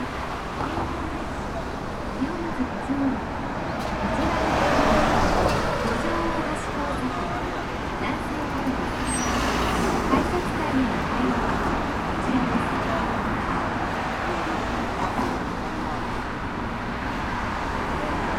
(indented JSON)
{
  "title": "pedestrian crossing, near river, Gojo, Kyoto - crossings sonority",
  "date": "2014-11-05 16:13:00",
  "description": "traffic signals, cars, mopeds, people, river ...",
  "latitude": "35.00",
  "longitude": "135.77",
  "altitude": "34",
  "timezone": "Asia/Tokyo"
}